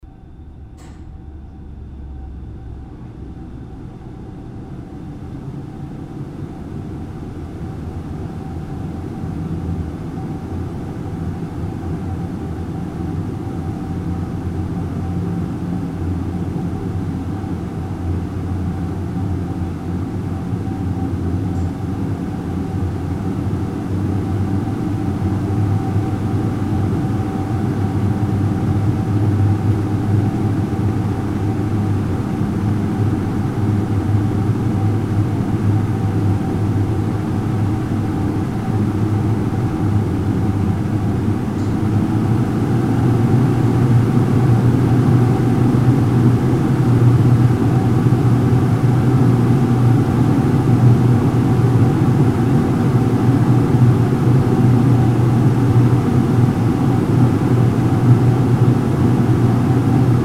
monheim, klappertorstr, fischräucherei
hochfahren der lüftung im langen kamin
morgens im frühjahr 07
soundmap nrw - social ambiences - sound in public spaces - in & outdoor nearfield recordings
klappertorstr, fischräucherei weber